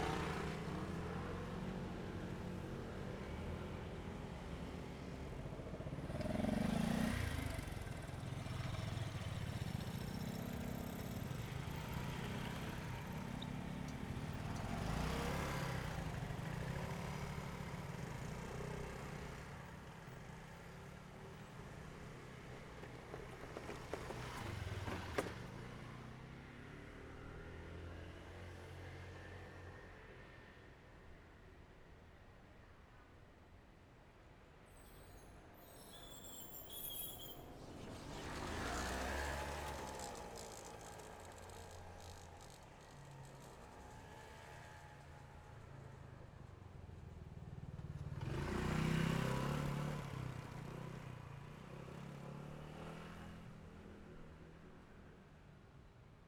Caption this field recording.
Small Railway crossings, motorcycle sound, the train passes by, Binaural recordings, Zoom H6 XY